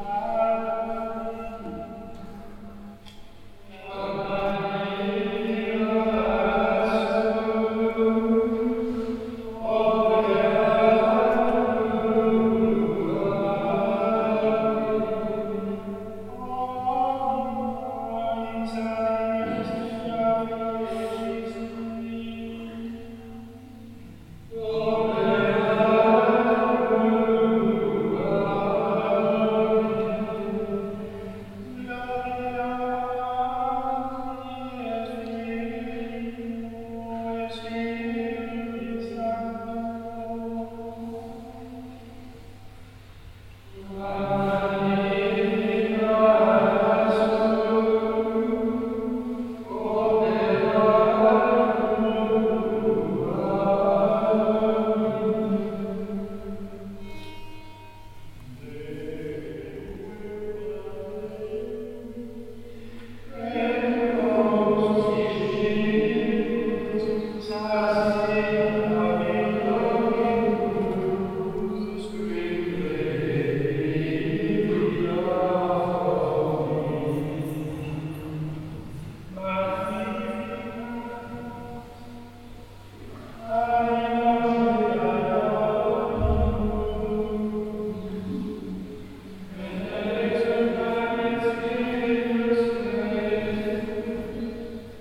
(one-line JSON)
{"title": "clervaux, abbey st. maurice, vesper", "date": "2011-08-02 19:18:00", "description": "Opening the chapelle door, the amplified sound of the priest choir celebrating the evening vesper (here to be heard in a short excerpt). In the background occasionally the openingan closing of the door.\nClervaux, Abtei St. Mauritius, Vesper\nÖffnen der Kapellentür, das verstärkte Geräusch vom Chor der Patren, die die Abendvesper feiern (hier in einem kurzen Ausschnitt). Im Hintergrund gelegentlich das Öffnen und Schließen der Tür.\nClervaux, abbaye Saint-Maurice, vêpres\nOuverture de la porte de la chapelle, bruit puissant de la chorale des pères qui célèbrent les vêpres (court enregistrement). Dans le fond, on entend par intermittence l’ouverture et la fermeture de la porte.\nProject - Klangraum Our - topographic field recordings, sound objects and social ambiences", "latitude": "50.06", "longitude": "6.02", "altitude": "450", "timezone": "Europe/Luxembourg"}